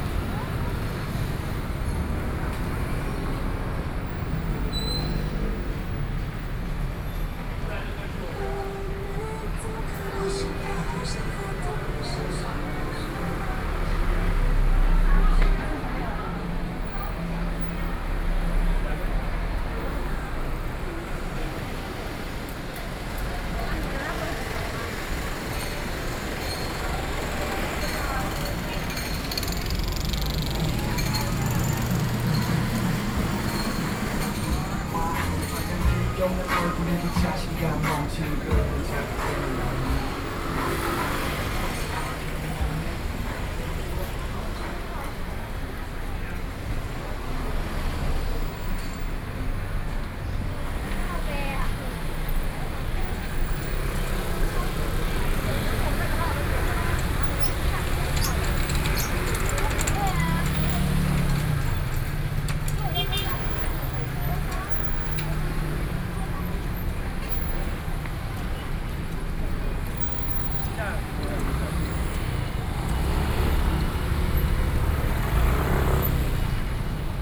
Taoyuan - Soundwalk
Street in the Station area, Sony PCM D50 + Soundman OKM II
Taoyuan County, Taiwan, August 2013